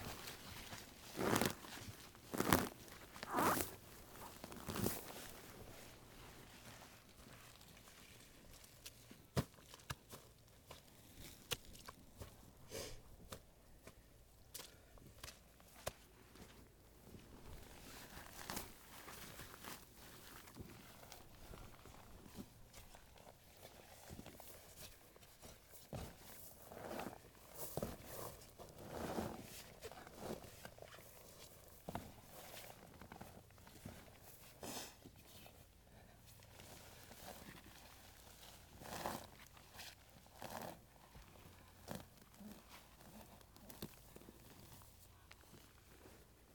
All over Shetland people still have peat cutting rights. If you look at the satellite image of this landscape, you can see dark lines running off the track; these are strips of land which have been cut back to reveal the young coal beneath. This is annually harvested in small quantities and used as a domestic fuel to heat the home throughout winter. There are many historic images of Shetland women walking with large keshies on their backs, filled with cut peats, and knitting as they walk; I was interested in listening to the labour associated with the peat harvest, and Laurie's mother, Ingrid, kindly agreed to cut some peat for me so I could hear how this work sounds. This is the wrong time of year to cut peat, as the ground is dry. Normally the work is done in May, when the winter rains have wet the earth through, and when the birds are very much noisier than they are here in this recording!
Shetland Islands, UK, 1 August 2013, 15:13